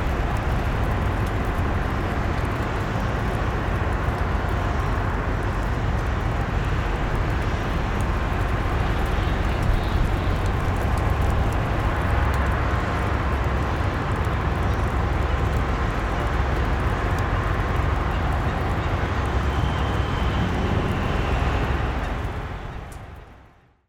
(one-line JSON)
{"title": "Kesterenstraat, Rotterdam, Netherlands - Vessels", "date": "2021-12-29 14:30:00", "description": "A few vessels anchored close to the shore make a constant mechanic noise. You can also listen to the rain and some seagulls. Recorded with zoom H8", "latitude": "51.89", "longitude": "4.45", "timezone": "Europe/Amsterdam"}